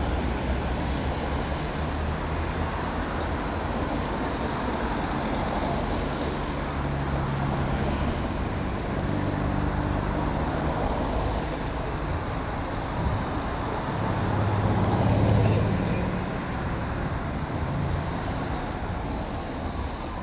Fußgängerüberweg, Verkehr, Musik, Straßenbahn, Menschen, Urban